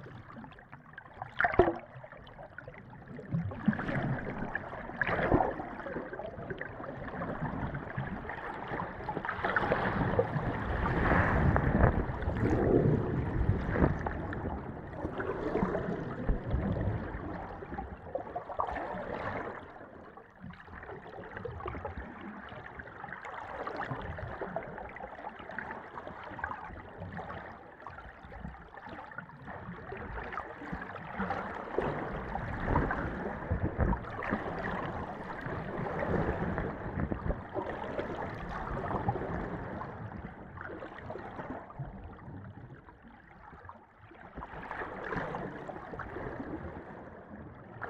Port Dalhousie East Pier terminus, St. Catharines, ON, Canada - East Pier
The sound of Lake Ontario at the newly-rebuilt Port Dalhousie East Pier (St. Catharines, ON). First we hear the Zoom H2n on the surface of the pier, then amid the rock berm below the pier surface but above the water, then we hear the Aquarian Audio H2a hydrophone about a half meter underwater at 2 close locations. The Port Dalhousie Piers, first constructed in the 1840s at the terminus of the second Welland Canal, extend the mouth of Port Dalhousie harbour on both the east and west banks. The piers were closed for safety reasons in 2015 and re-opened in June 2021.
Ontario, Canada, 15 June 2021, ~11:00